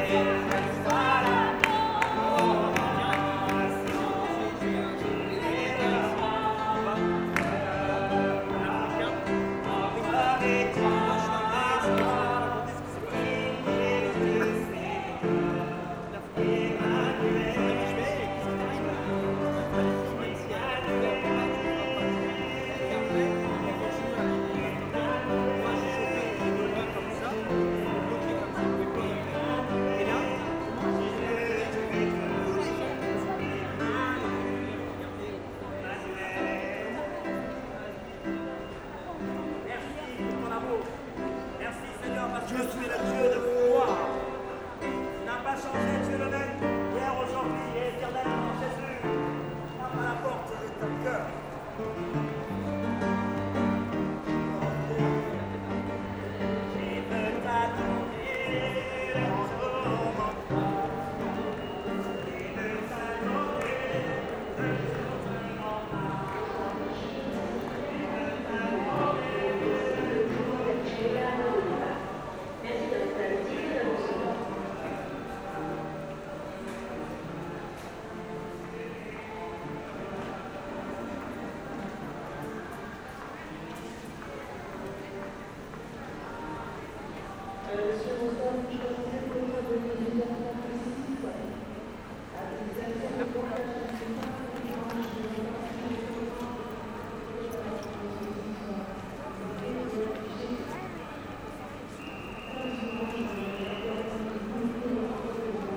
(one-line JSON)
{"title": "St Georges, Paris, France - Paris Saint-Lazare station", "date": "2016-07-20 18:00:00", "description": "A trip into the Paris Saint-Lazare station. There's an old piano in the station. Persons are using it and singing songs about Jesus.", "latitude": "48.88", "longitude": "2.33", "altitude": "46", "timezone": "Europe/Paris"}